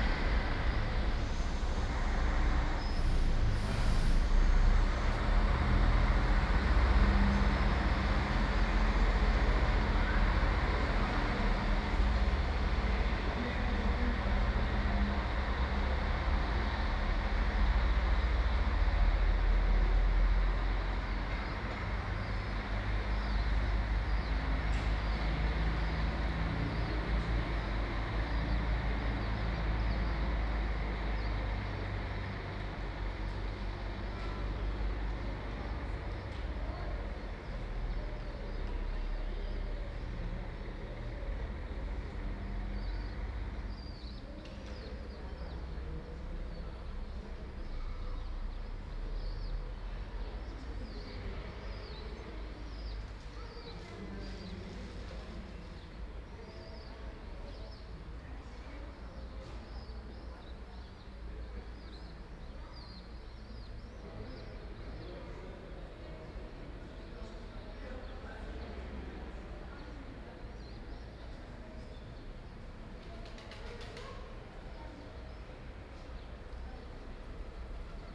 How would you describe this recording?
The regional bus terminal on a spring Saturday morning. Binaural mics / Tascam DR40